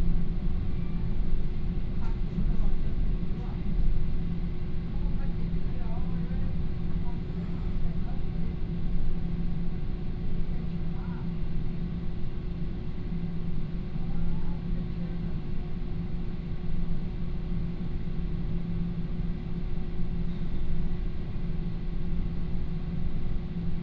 Banqiao District, New Taipei City - In the train compartment
In the train compartment
Banqiao District, New Taipei City, Taiwan, 19 October 2016, 12:18